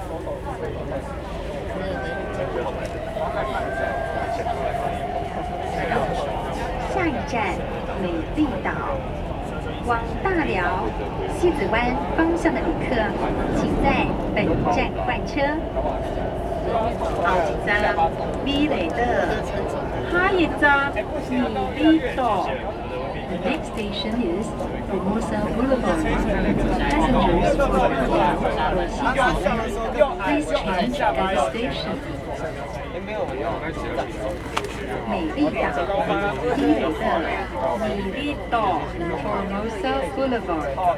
Sinsing, Kaohsiung - Take the MRT
苓雅區 (Lingya), 高雄市 (Kaohsiung City), 中華民國, 1 February 2012, 12:20